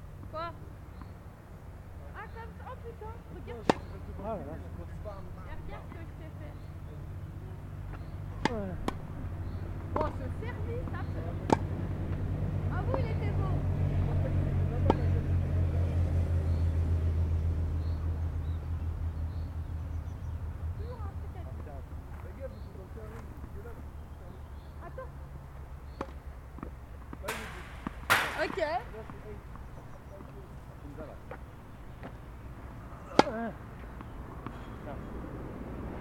Quatre joueurs sur les courts de tennis près de la courbe de la RD991 assez fréquentée, impulsions sonores des frappes de balles. Enregistreur ZoomH4npro posé à plat au sol.
Auvergne-Rhône-Alpes, France métropolitaine, France, 28 June 2022, 17:15